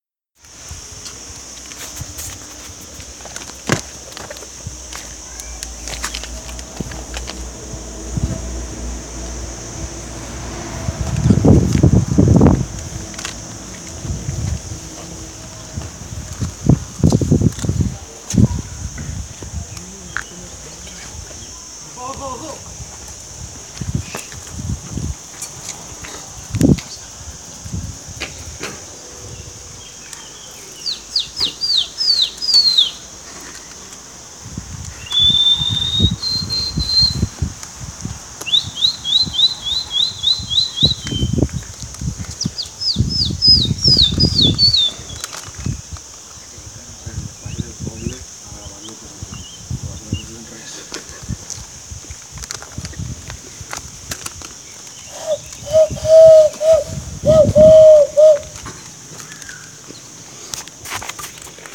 Nuestra Señora de la Asunción, Valencia, España - Pantano
Pájaros, naturaleza y gente pasando por un pantano.